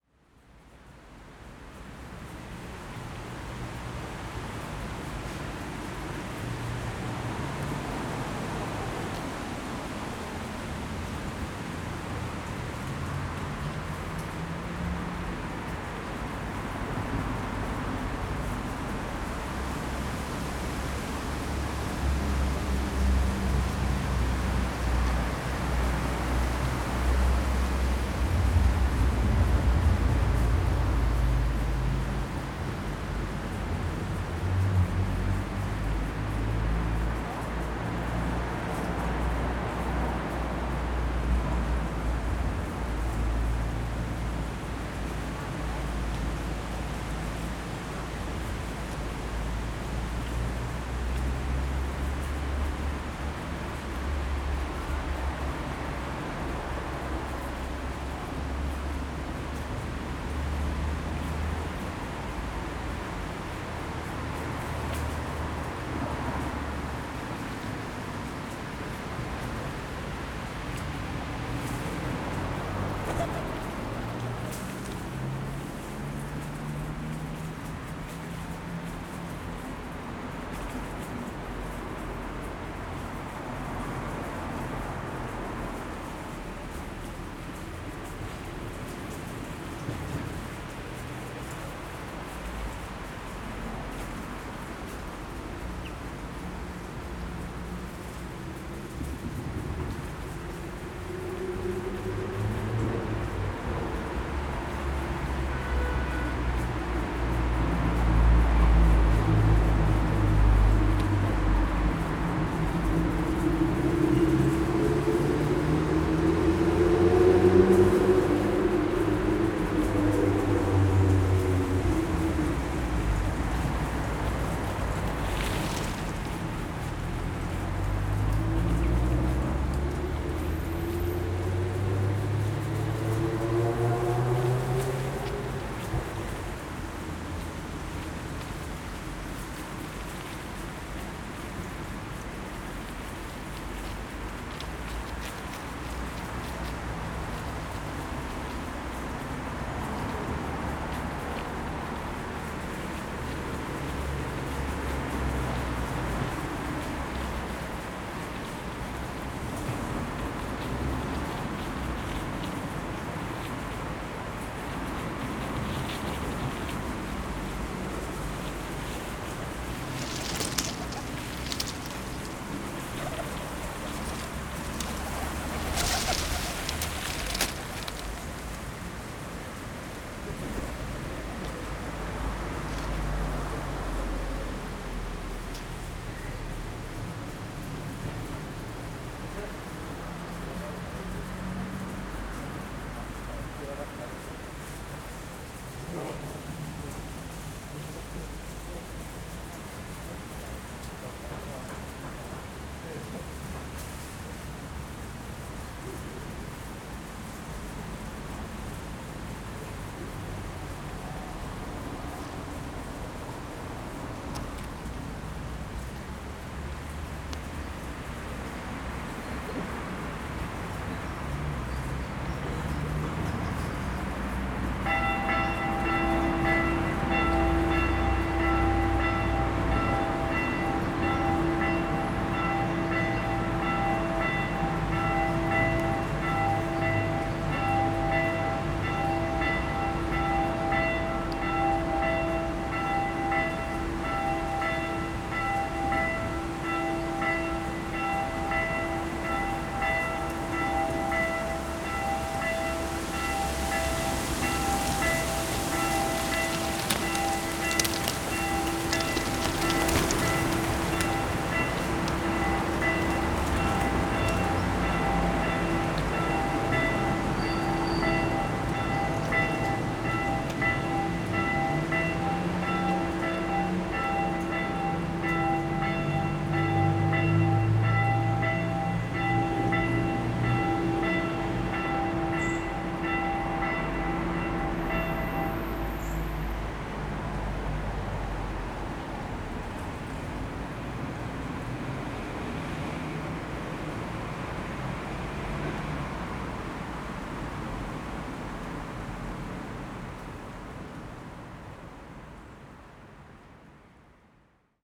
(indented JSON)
{
  "title": "Magdalenski park, Maribor, Slovenia - feeding time",
  "date": "2012-08-26 11:55:00",
  "description": "pigeons rummaging for food amongst the dead leaves in a small park surrounded by city traffic. they flew off in a group as the noon bells rang - i guess lunch break was over.",
  "latitude": "46.55",
  "longitude": "15.65",
  "altitude": "279",
  "timezone": "Europe/Ljubljana"
}